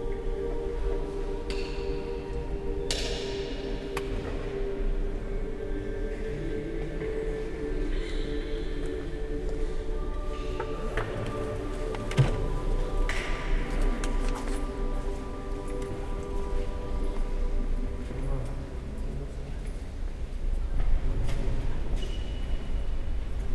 Dijon, France - Dijon cathedral
Waiting in the Dijon cathedral, while a group of chinese tourists quickly visit the nave.
July 2017